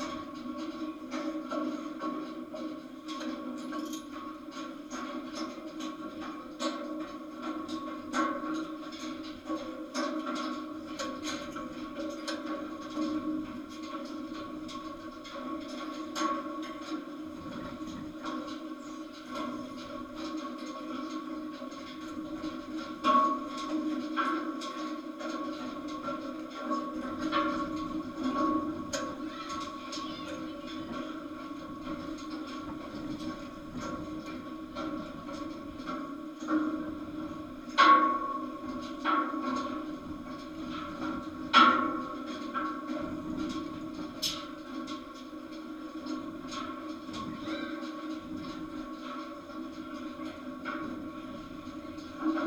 Latvia, Ventspils, singing watchtower
contact microphone recording. the tourists climbs to watchtower and then my friend tries to play some percussion...